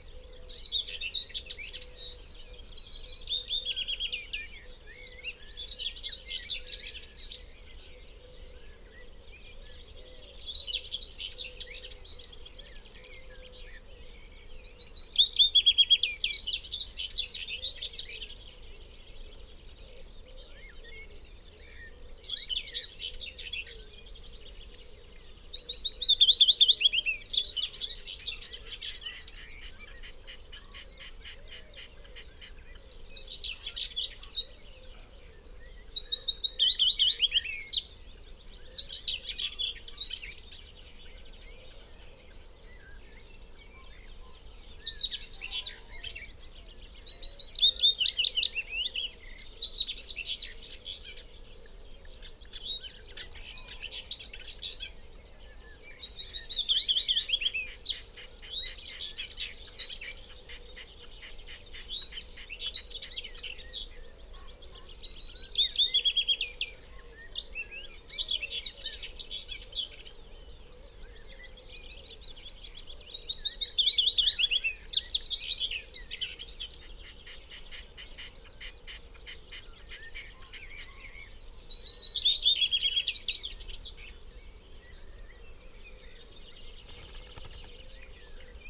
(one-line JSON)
{"title": "Green Ln, Malton, UK - willow warbler song soundscape ...", "date": "2020-05-08 05:00:00", "description": "willow warbler song soundscape ... dpa 4060s to Zoom F6 ... mics clipped to twigs ... bird calls ... song ... from ... linnet ... great tit ... red -legged partridge ... pheasant ... yellowhammer ... whitethroat ... chaffinch ... blackbird ... wood pigeon ... crow ... some background noise ...", "latitude": "54.12", "longitude": "-0.54", "altitude": "83", "timezone": "Europe/London"}